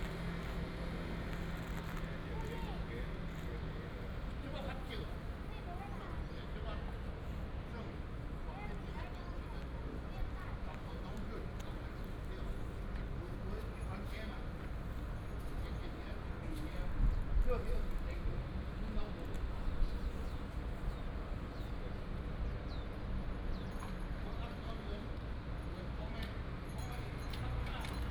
Outside the station, Footsteps